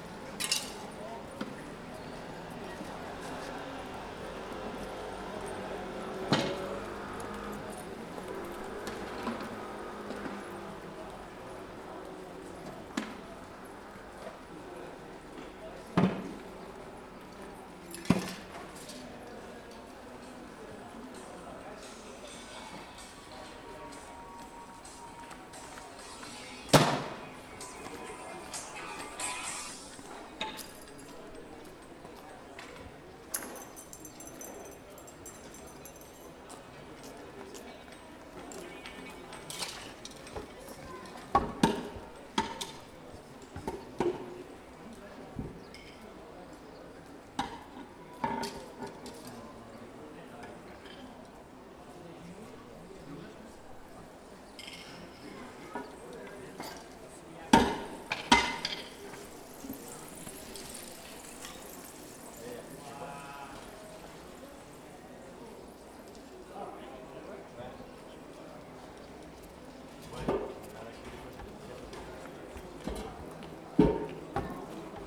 Rue Gabriel Péri, Saint-Denis, France - Outside Opticians, R. Gabriel Péri
This recording is one of a series of recording mapping the changing soundscape of Saint-Denis (Recorded with the internal microphones of a Tascam DR-40).